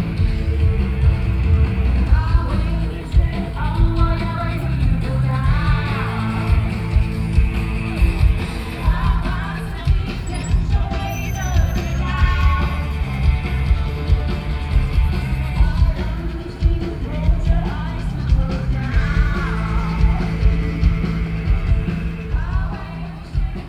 Huashan 1914 Creative Park - Soundwalk
Holiday crowds, Sound Test, Sony PCM D50 + Soundman OKM II